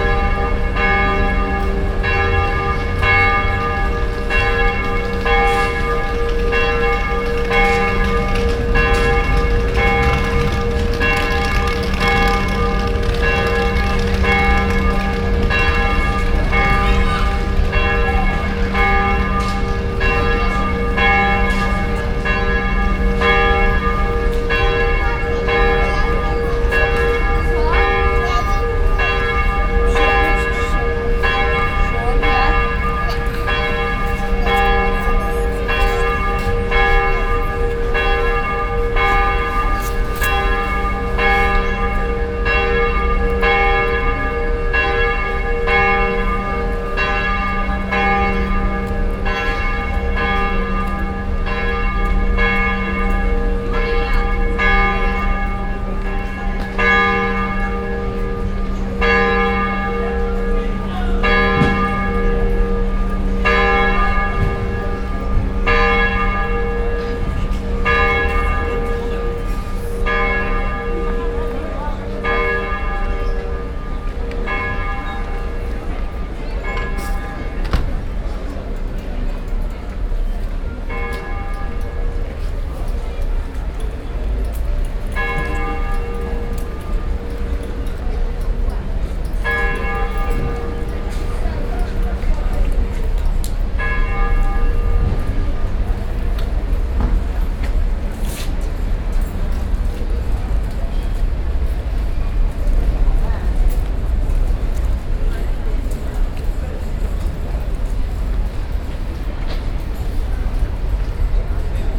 Brussels, Parvis de Saint-Gilles, the bells